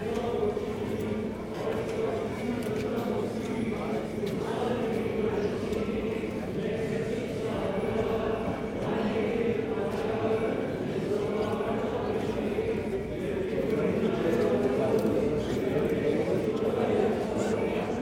During the 3rd part of the orthodox celebration, the Liturgy of the Faithful. The church is absolutely completely full ! People are moving everywhere, entering, going out, lighting candles, discussing, phoning, singing, pushing me, and praying. The orthodox mass in Armenia is a strong experience !
Gyumri, Armenia, September 2018